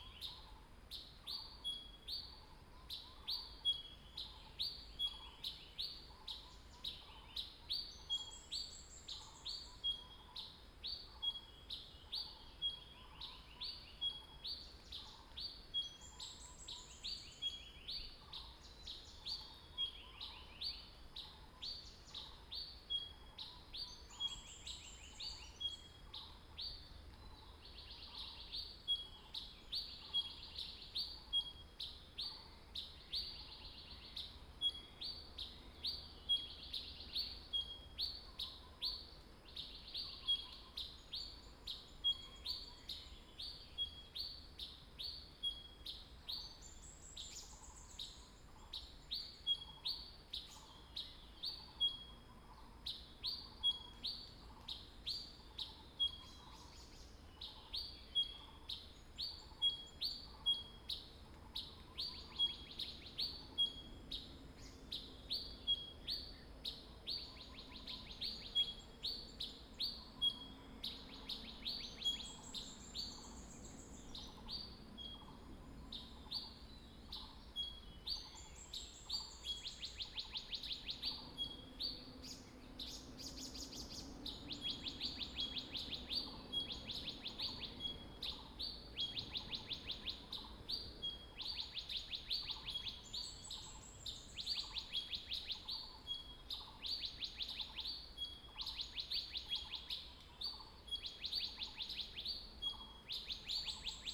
水上, Puli Township, Nantou County - Birds singing
Birds singing, Next to the woods